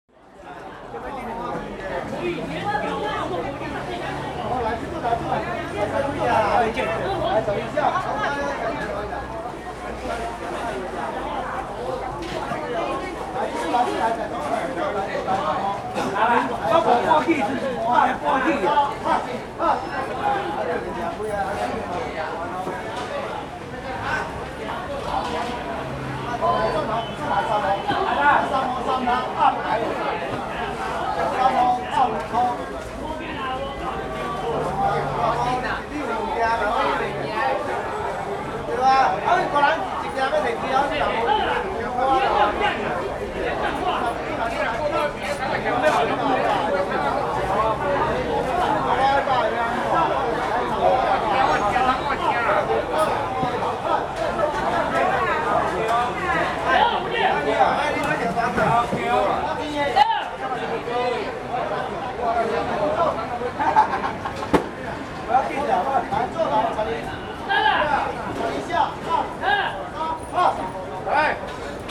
Zhongzheng N. Rd., Sanchong Dist., New Taipei City - Next market
Fruits and vegetables wholesale market
Sony Hi-MD MZ-RH1 +Sony ECM-MS907